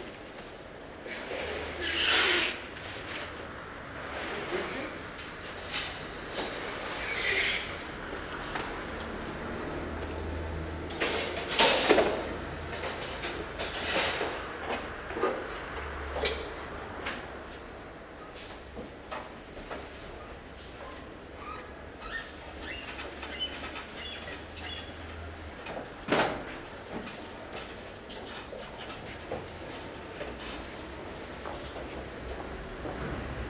S+U Wittenau - Eingang, Obststand / entry area, fruits stand
18.03.2009 19:15 fruit stand, closing time, pack and clean up